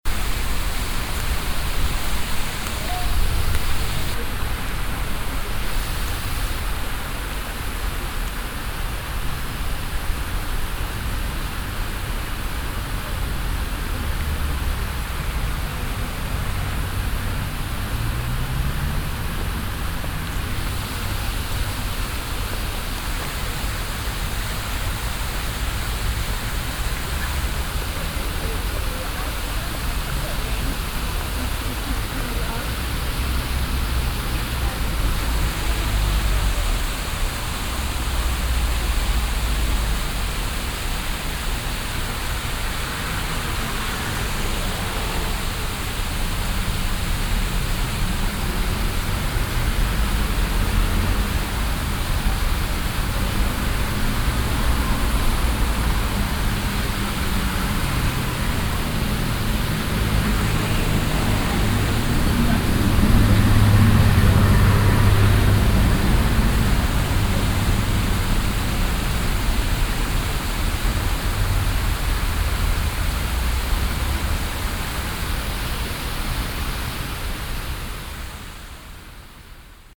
paris, hotel de ville, fountain
a large sprinkling fountain ensemble parallel to the rue de rivoli with dense traffic
cityscape international - social ambiences and topographic field recordings